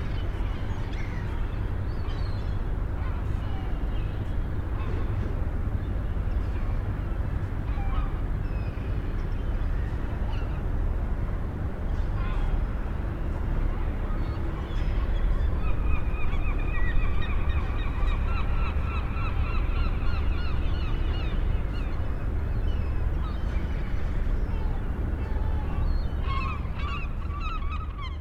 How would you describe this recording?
Seagulls, fishing trawlers, cooling units - the typical sounds of a fishing port. Zoom H2.